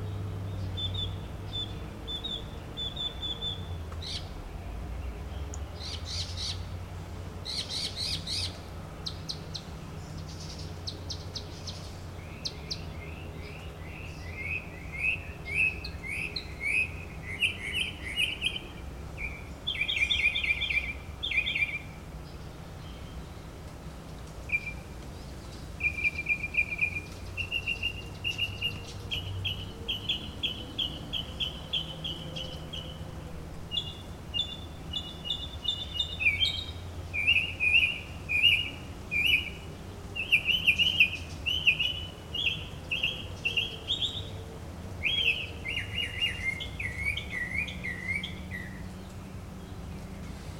Kendale Lakes, FL, USA - Morining Seranade
Clear bright morning
Residential area
Mockingbirds